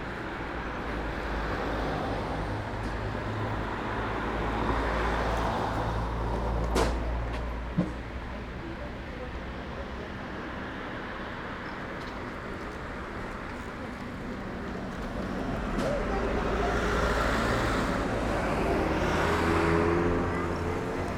{"title": "Poznan, Strozynskiego str., in front of Chata Polska convenience store - parcel handling", "date": "2019-06-05 11:18:00", "description": "a courier loading parcels into InPost pickup compartments. he's working in a hurry. you can hear him slamming the doors of the compartments. traffic and people leaving the store as well as purchasing fruit on the stand nearby. (roland r-08)", "latitude": "52.46", "longitude": "16.90", "altitude": "101", "timezone": "Europe/Warsaw"}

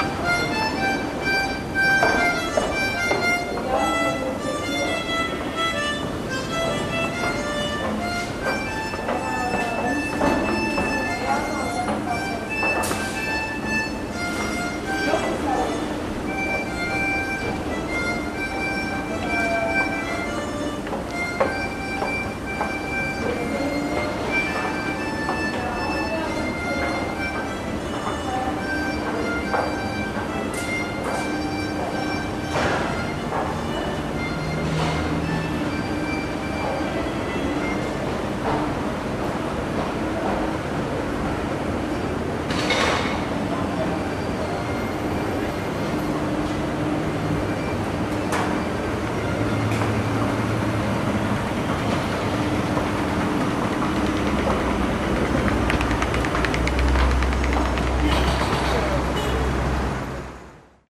{"title": "Levent metro station, a week of transit, monday morning - Levent metro station, a week of transit, tuesday morning", "date": "2010-09-28 09:40:00", "description": "The ephemeral is even less lasting in the city. But it shows up more often.", "latitude": "41.08", "longitude": "29.01", "altitude": "143", "timezone": "Europe/Berlin"}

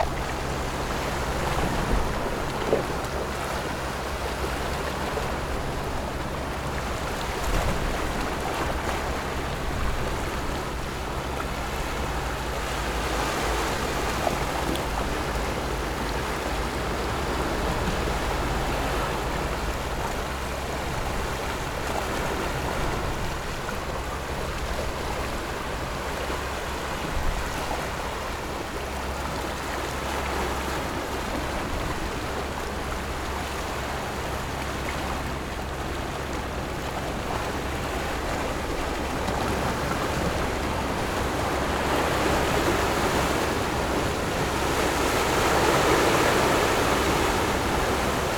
{"title": "大窟澳, New Taipei City - sound of the waves", "date": "2014-07-29 17:42:00", "description": "Rocks and waves, Very hot weather\nZoom H6 Ms+ Rode NT4", "latitude": "24.98", "longitude": "121.97", "timezone": "Asia/Taipei"}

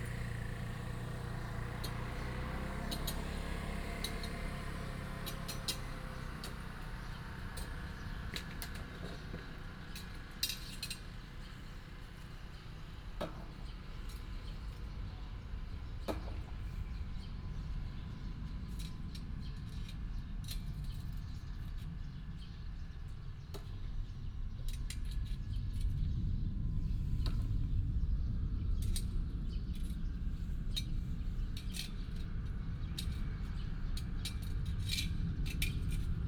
{"title": "中崙溪南三元宮, Xinfeng Township - Sweeping voice", "date": "2017-08-26 07:53:00", "description": "In the square of the temple, Birds sound, The motorcycle starts, Sweeping voice, The plane flew through", "latitude": "24.90", "longitude": "121.00", "altitude": "27", "timezone": "Asia/Taipei"}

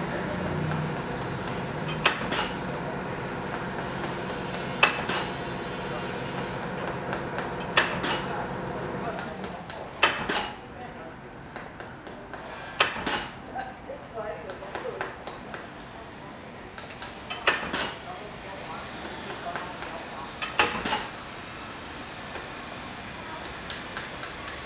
{"title": "永盛公園, Sanchong Dist., New Taipei City - in the Park", "date": "2012-02-10 13:44:00", "description": "in the Park, Removal packing, Traffic Sound, There came the sound of small factories nearby\nSony Hi-MD MZ-RH1 +Sony ECM-MS907", "latitude": "25.08", "longitude": "121.49", "altitude": "15", "timezone": "Asia/Taipei"}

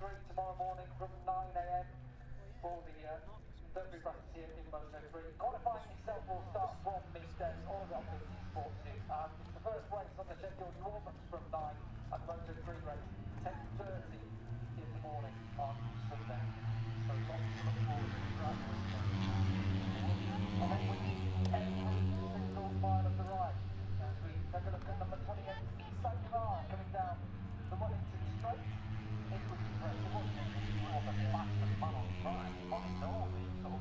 {"title": "Silverstone Circuit, Towcester, UK - british motorcycle grand prix ... 2021", "date": "2021-08-27 13:15:00", "description": "moto three free practice two ... maggotts ... dpa 4060s to Mixpre3 ...", "latitude": "52.07", "longitude": "-1.01", "altitude": "158", "timezone": "Europe/London"}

